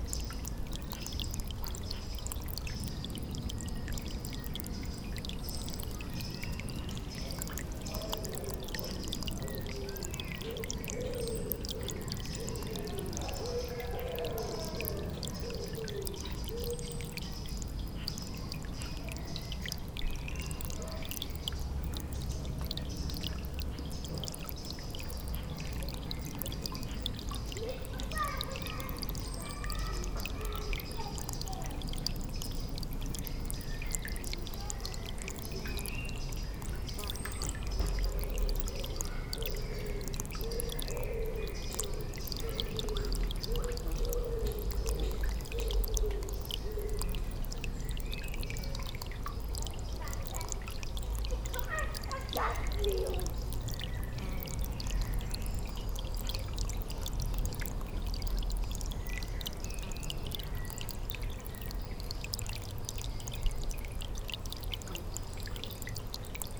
Mont-Saint-Guibert, Belgique - The pond
This is a completely abandoned pond. You can access it crossing brambles. It's a quiet place, in the back of the gardens. Sound of the rill, giving water to the pond, and children playing on a hot saturday evening.